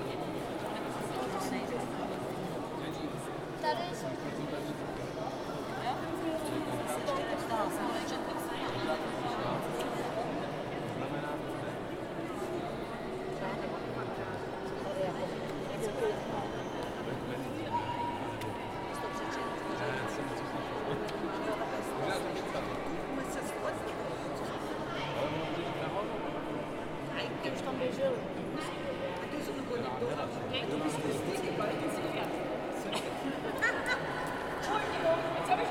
Innenaufnahme. Starker Hall. Stimmengewirr.